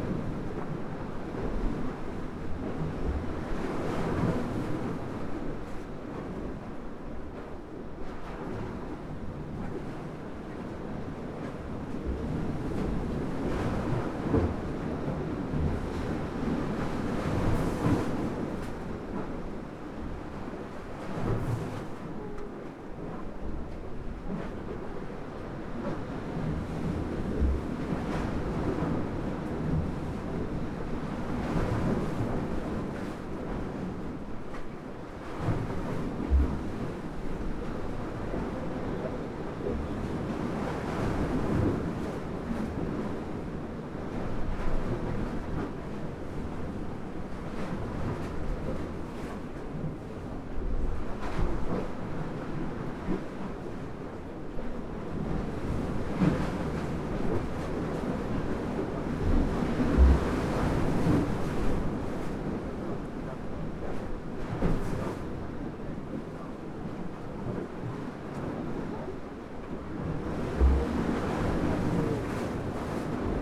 Latvia, Ventspils, on a pier's stones
close listening on Ventspils pier's stones